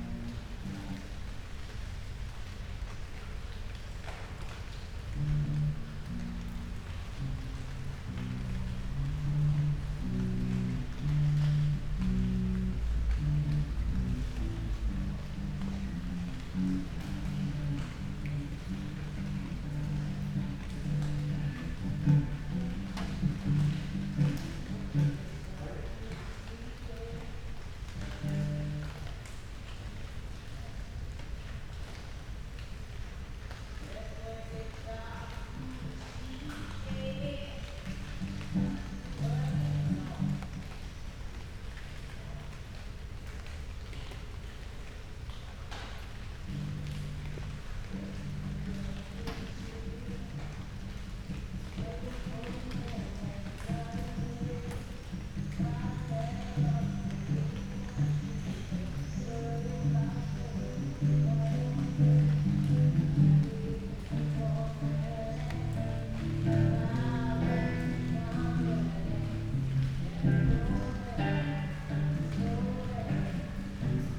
berlin, friedelstraße: backyard window - the city, the country & me: backyard window, raindrops, rehearsing musicians
raindrops hitting leaves, musicians rehearsing in a flat
the city, the country & me: july 25, 2014
25 July, 21:48